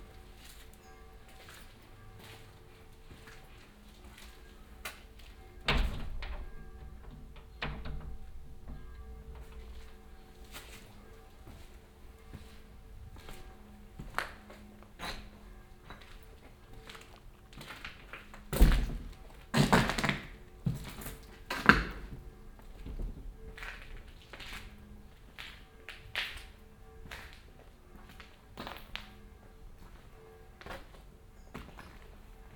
former palm oil factory and storage, closed since decades, now beeing rebuild as luxus lofts, construction set on ground floor, lots of debris, binaural exploration.